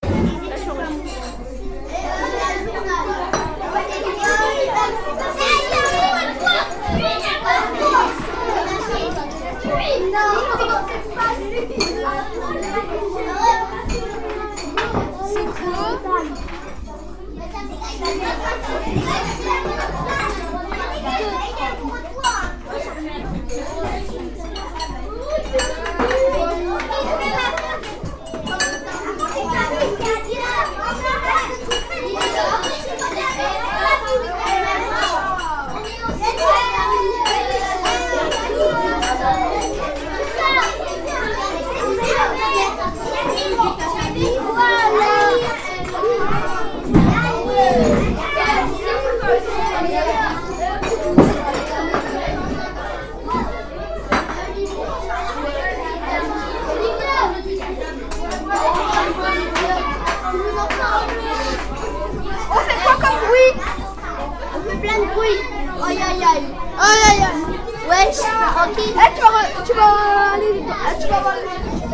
Leforest, France - Cantine de L'école Jean Rostand
C'est l'heure de la cantine à l'école Jean Rostand (le préfabriqué n'existait pas encore sur google maps).
Enregistrement par les élèves de CM2 de l'école Jean Rostand.
It's lunch time at Jean Rostand school. Recording by the pupils of CM2 of Jean Rostand.